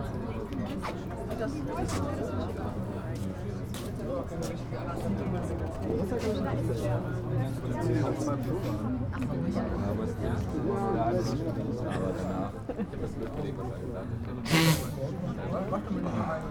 Kalk-Mülheimer Str, Kalk, Köln - Baustelle Kalk, exhibition ambience
Baustelle Kalk is a rising project-space based in Cologne's infamous worker's district Kalk.
It is a place where ideas can develop. Our premises offer the perfect space for innovative concepts and niched culture. We host readings, performances, exhibitions etc. and are proud to support (young) talents from all over the world.
27 March 2014, 19:00